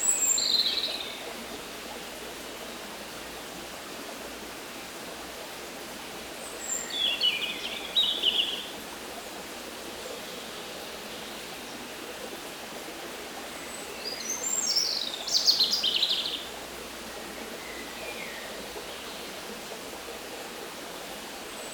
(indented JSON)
{
  "title": "Surjoux, France - Near the river",
  "date": "2017-06-13 20:40:00",
  "description": "At the end of this path, there's a big fall called Le Pain de Sucre. In french it means the sugar bread. It's because there's an enormous concretion like a big piece of sugar. Here the sound is the quiet river near the fall, with discreet birds living near the river.",
  "latitude": "46.02",
  "longitude": "5.81",
  "altitude": "306",
  "timezone": "Europe/Paris"
}